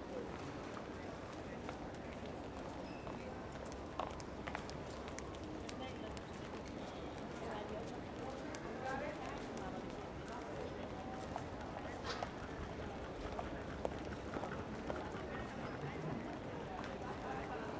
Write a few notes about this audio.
listen to the waves of people arriving and leaving - this recording follows as third to steps, steps and cases